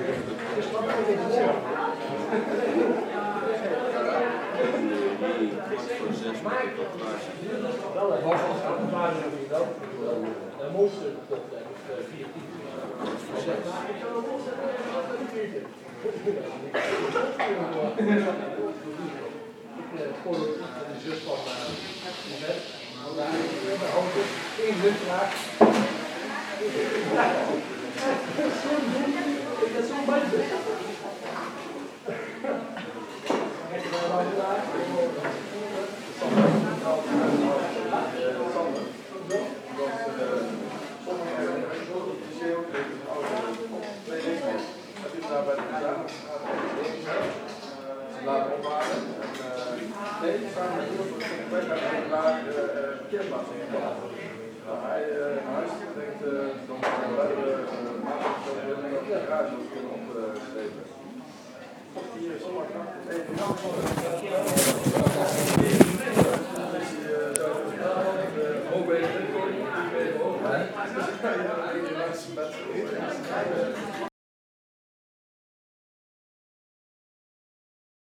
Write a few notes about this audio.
Vestdijk Eindhoven, wokrestaurant Easy Wok & G, people talk, eat and sizzling wok sounds